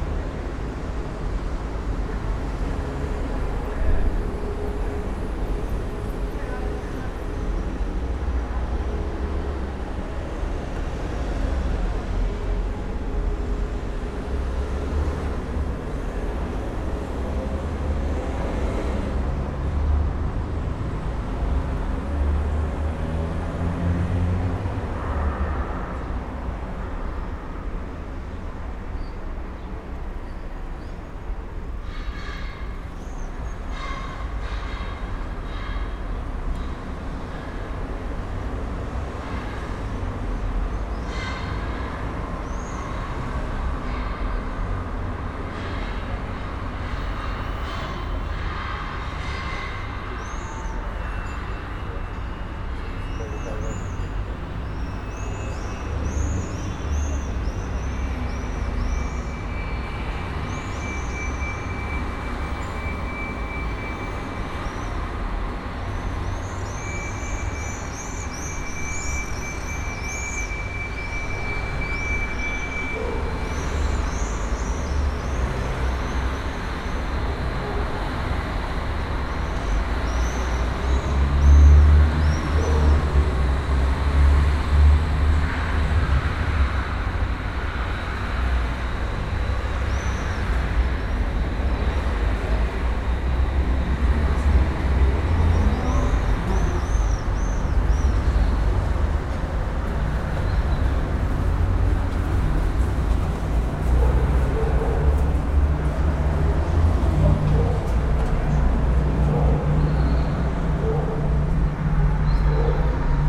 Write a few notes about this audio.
a slow walk through stefan rummel's sound installation, 'within the range of transition', which is placed in a passageway between a quiet courtyard and maribor's main square. recorded quasi-binaurally.